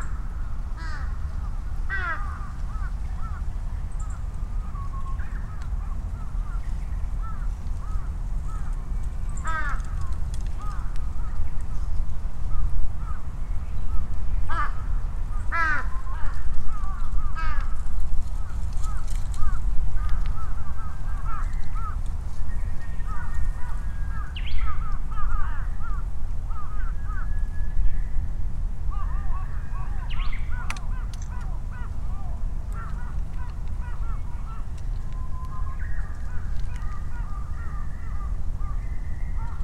Ichimiyake, Yasu-shi, Shiga-ken, Japan - Crows at Ichimiyake
Noisy crows calling and responding, quiet Japanese bush warblers and other birds, children playing and other human sounds heard over the rumble of vehicles and aircraft on a Sunday at noon in Ichimiyake, Yasu City, Shiga Prefecture, Japan. Recorded on a Sony PCM-M10 with small omnidirectional mics attached to a bicycle handlebar bag. See details are and photos at Shiga Rivers.